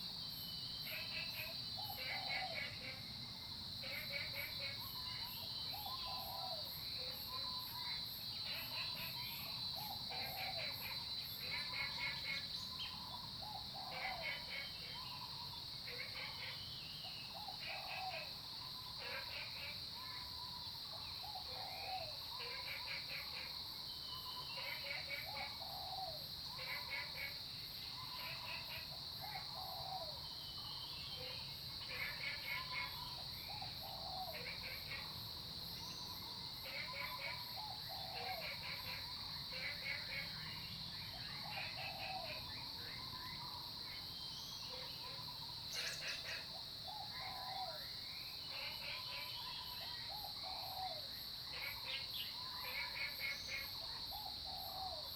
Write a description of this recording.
Early morning, Frogs sound, Bird calls, Aircraft flying through, Bird calls, Zoom H2n MS+XY